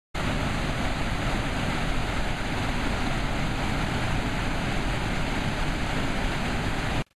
Sakura no Taki. Water is going down constantly.
Here is the place to see the scenery.Are prohibited, such as fishing.
Hokkaido Prefecture, Shari District, Kiyosato 道道1115号線